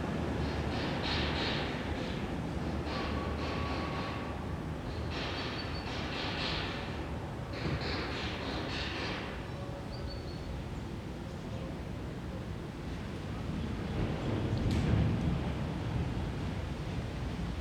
Koloniestraße, Berlin, Deutschland - Koloniestraße, Berlin - passers-by, scrapyard, distant mosque
Koloniestraße, Berlin - passers-by, scrapyard, distant mosque. Besides the clanking noises from the scrapyard you can also hear some vague murmurs and even singing from inside the Shiite Imam-Sadık-mosque over the street, if you listen carefully enough.
[I used the Hi-MD-recorder Sony MZ-NH900 with external microphone Beyerdynamic MCE 82]
Koloniestraße, Berlin - Passanten, Schrottplatz, Moschee in einiger Entfernung. Wenn man genau hinhört, kann man außer dem metallischen Scheppern vom Schrottplatz undeutlich auch die Sprechchöre und Gesänge aus der schiitischen Imam-Sadık-Moschee auf der anderen Straßenseite hören.
[Aufgenommen mit Hi-MD-recorder Sony MZ-NH900 und externem Mikrophon Beyerdynamic MCE 82]
Berlin, Germany, 12 October